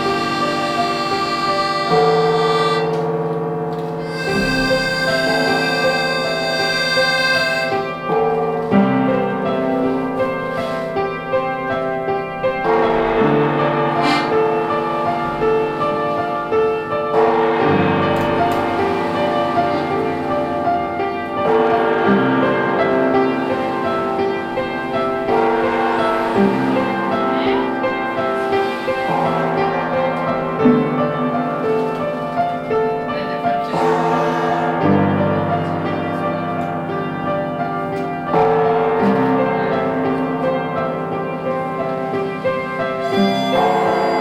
{"title": "Borbeck - Mitte, Essen, Deutschland - essen, traugott weise school, music class", "date": "2014-05-13 13:35:00", "description": "In der Traugott Weise Schule, einer Förderschule mit dem Schwerpunkt geistige Entwicklung - hier in einer Musik Klasse. Der Klang der Combo TWS Kunterbunt bei der Probe eines gemeinsam erarbeiteten Musikstücks.\nInside the Traugott Weise school - a school for special needs - in a music class. The sound of the TWS cpmbo Kunterbunt rehearsing a common music piece.\nProjekt - Stadtklang//: Hörorte - topographic field recordings and social ambiences", "latitude": "51.47", "longitude": "6.95", "altitude": "65", "timezone": "Europe/Berlin"}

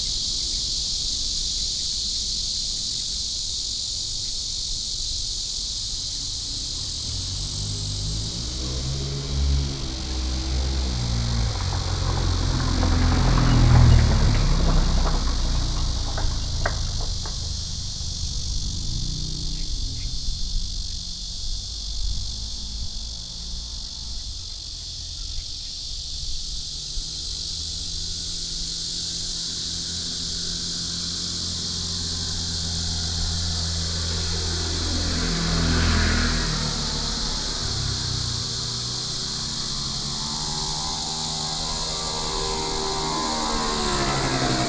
{"title": "金山區磺港里, New Taipei City - in the Cemetery", "date": "2012-07-11 08:39:00", "description": "Cicadas cry, in the Cemetery\nSony PCM D50+ Soundman OKM II", "latitude": "25.22", "longitude": "121.64", "altitude": "31", "timezone": "Asia/Taipei"}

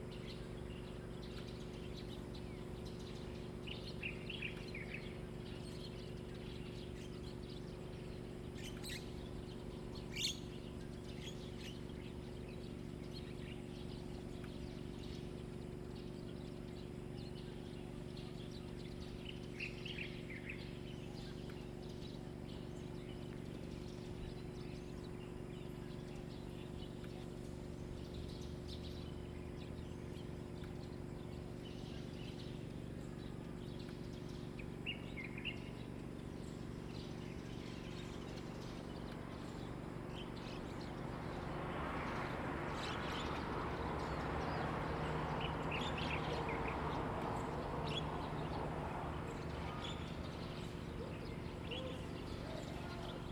{"title": "美農村, Beinan Township - Early morning in a small village", "date": "2014-09-07 07:18:00", "description": "Birdsong, Traffic Sound, Small village, Crowing sound\nZoom H2n MS +XY", "latitude": "22.84", "longitude": "121.09", "altitude": "189", "timezone": "Asia/Taipei"}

